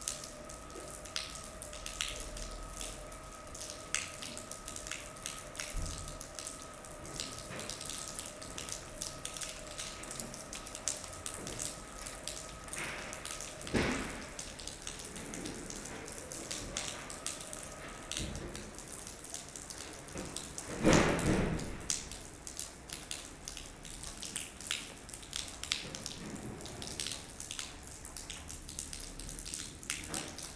La Rochelle, France - Its raining
A constant rain is falling on La Rochelle this morning. Water is falling from gutters.